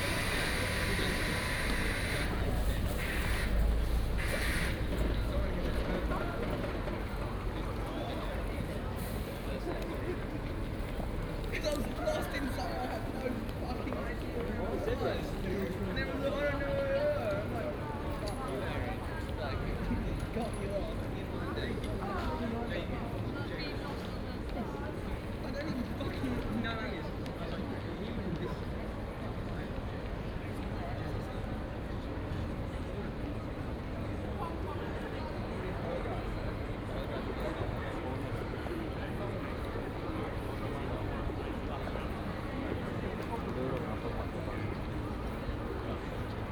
Bonn Square, Oxford, UK - square ambience
having a rest at Bonn Square, Oxford. quite some people had the same idea too. deep hum of a bus waiting nearby.
(Sony PCM D50, OKM2)
14 March 2014, 14:30